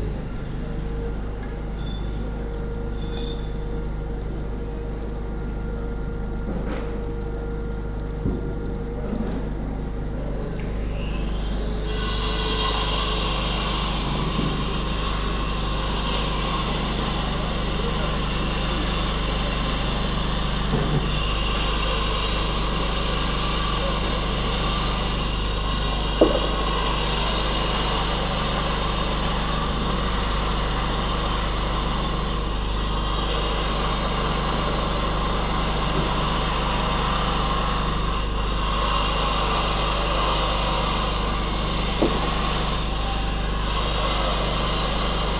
S. Polo, Venezia, Italien - campo s. polo
campo s. polo, s. polo, venezia
Venezia, Italy, 9 October 2015